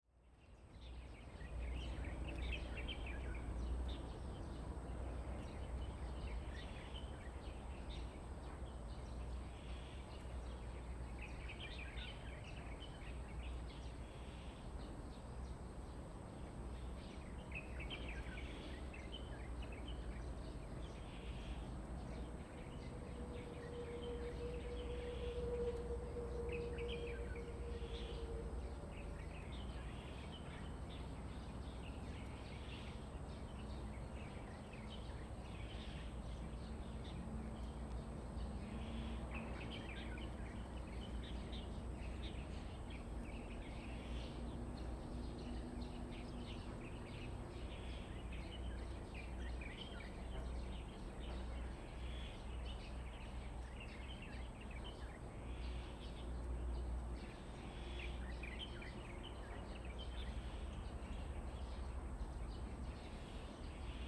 慈能宮, Xincheng Township - In front of the temple
In front of the temple, Birds, The weather is very hot
Zoom H2n MS+XY
Hualien County, Taiwan, 27 August 2014